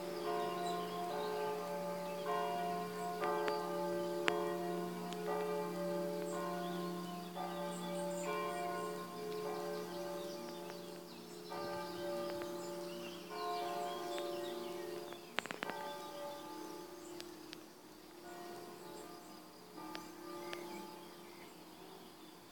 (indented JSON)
{
  "title": "Donzenac, France - Pentecôte à Donzenac",
  "date": "2015-05-25 12:00:00",
  "description": "La Pentecôte sonne au clocher XIIIème siècle de Donzenac, cité médiévale",
  "latitude": "45.23",
  "longitude": "1.52",
  "altitude": "206",
  "timezone": "Europe/Paris"
}